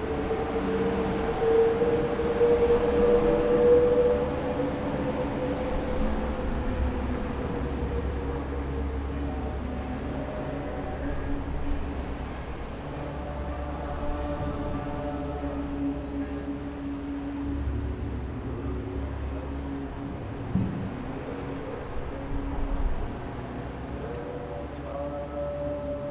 {"title": "Funchal - ceremony", "date": "2011-05-18 01:24:00", "description": "This is a recording I made from the balcony of a ceremony I couldn't identify but that could be heard from afar. Sinister and immersive...", "latitude": "32.65", "longitude": "-16.92", "altitude": "65", "timezone": "Atlantic/Madeira"}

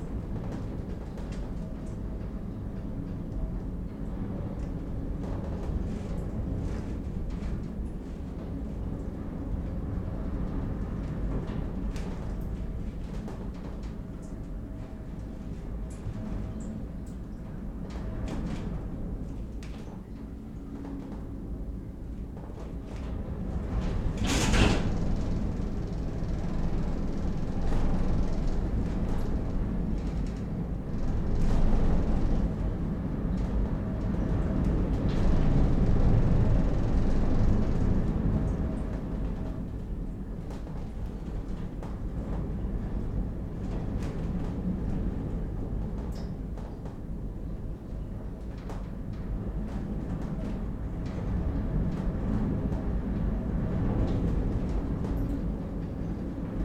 {"title": "Wind under the roof, Riga, Latvia", "date": "2012-03-03 10:30:00", "description": "roof bucking sounds from high winds", "latitude": "56.95", "longitude": "24.07", "altitude": "4", "timezone": "Europe/Riga"}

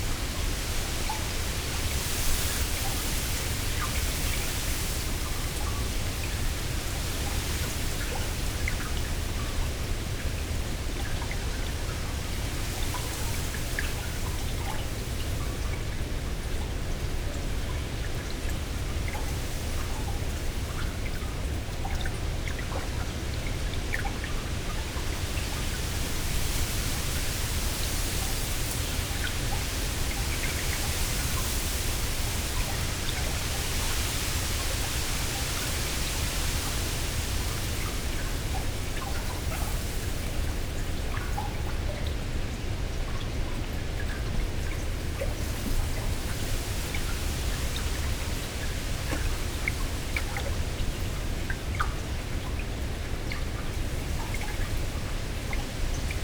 {"title": "Westwood Marsh, United Kingdom - Strong wind in reeds above and below water", "date": "2020-07-12 17:00:00", "description": "Reeds grow in water; the tall stems and leaves catch the sun and wind above, while the roots are in the mud below. This track is a mix of normal mics listening to wind in the reeds combined with a mono underwater mic - in sync and at the same spot - picking up the below surface sound. The very present bass is all from the underwater mic. I don't know what creates this, maybe its the movement of the whole reed bed, which is extensive, or the sound of waves pounding the beach 300meters away transmitted through the ground.", "latitude": "52.30", "longitude": "1.65", "altitude": "1", "timezone": "Europe/London"}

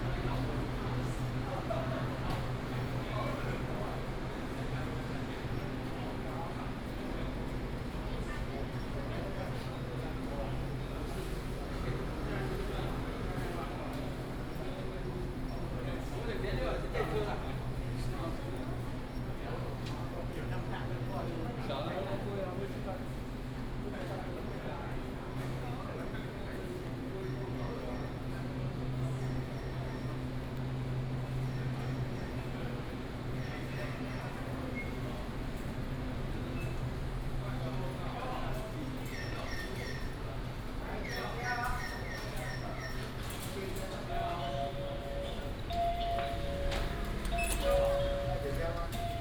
{"title": "彰化車站, 彰化縣, Taiwan - Walking in the station area", "date": "2017-01-19 08:51:00", "description": "From the station platform, To the station exit, Across the square in front of the station", "latitude": "24.08", "longitude": "120.54", "altitude": "19", "timezone": "GMT+1"}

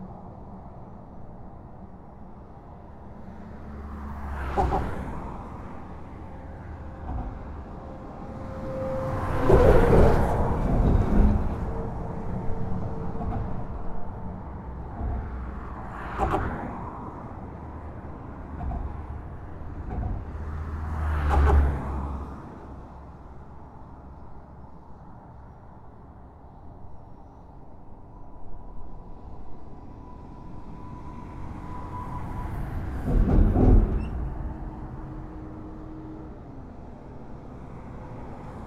{"title": "La Rivière-Saint-Sauveur, France - Normandie bridge", "date": "2016-07-21 10:00:00", "description": "The Normandie bridge is an enormous structure above the Seine river. This is a recording of the expansion joint, this time outside the bridge. Ambiance is very violent.", "latitude": "49.42", "longitude": "0.28", "altitude": "7", "timezone": "Europe/Paris"}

